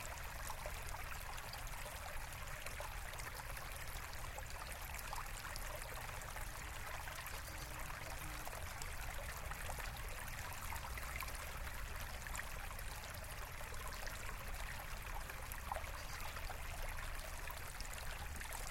Oakville, Ontario Canada - Stream and Noise Pollution